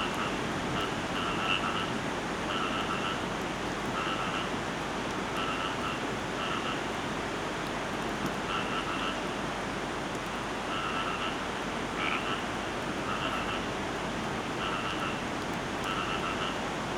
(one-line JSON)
{"title": "Jalan Similajau National Park, Bintulu, Sarawak, Maleisië - frogs by the sea", "date": "2007-12-07 10:21:00", "description": "frogs by the sea. At first i was really surprised: amphibians and salt water doesn't seem like a healthy combination. But then i found out that bjust behind the beach raainwater pools were formed in the undergrowth. So now you can enjoy in audio the combination of sea and frogs", "latitude": "3.35", "longitude": "113.16", "altitude": "10", "timezone": "Asia/Kuching"}